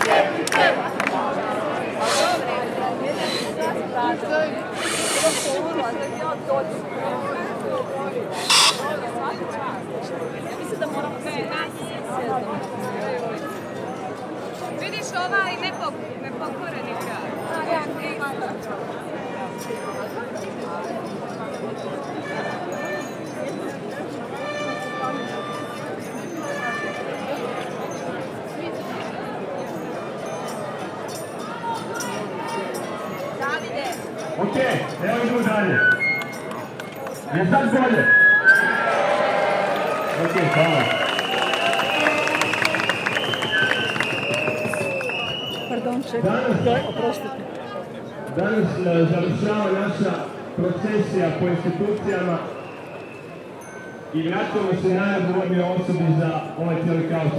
Zagreb, demonstrations against devastation of Varsavska - demanding resignation of mayor
one of the demonstration leaders demanding resignation of the mayor, reactions of citizens